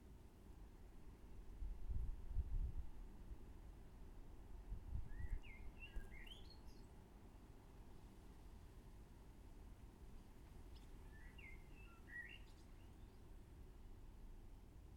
Wedmore, UK - A pair of wrens feed their young
Right in the corner of our terrace a pair of wrens have built a nest in the eaves of our porch. We can sit in the kitchen and watch their busy feeding schedule. I strung a pair of Roland binaural mics CS10-EM to an Olympus LS11. Each mic was about 3" either side of the nest and I left the rig there for a couple of hours. This is a short extract. No editing apart from extraction, fade in/out